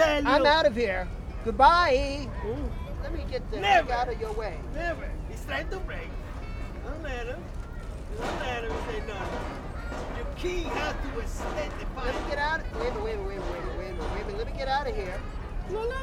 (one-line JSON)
{"title": "a chess game in Union square, march 2008", "latitude": "40.74", "longitude": "-73.99", "altitude": "12", "timezone": "Europe/Berlin"}